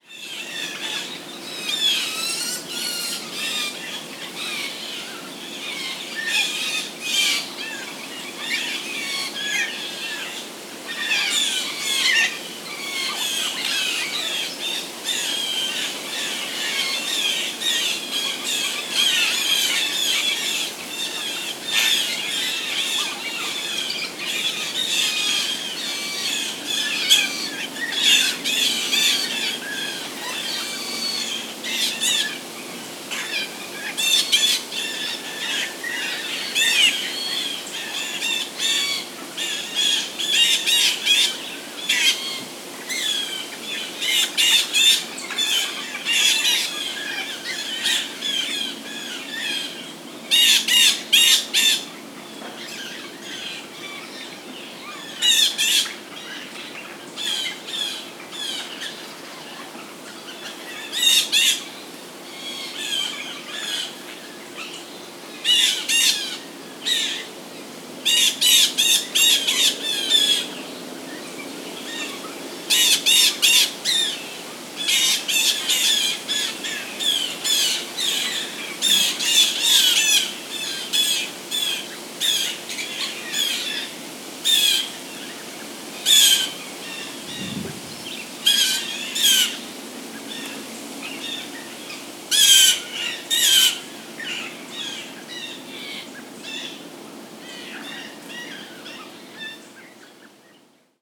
Large flock of hungry blue jays hunting small caterpillars in the trees. Motorboat drone and passing vehicles in distance. Zoom H2n with EQ and levels post.